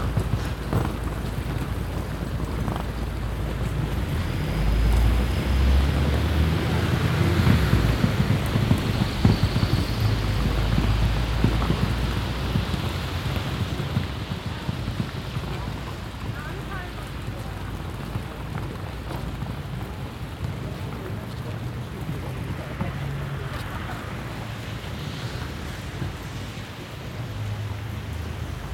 radio aporee sound tracks workshop GPS positioning walk part 8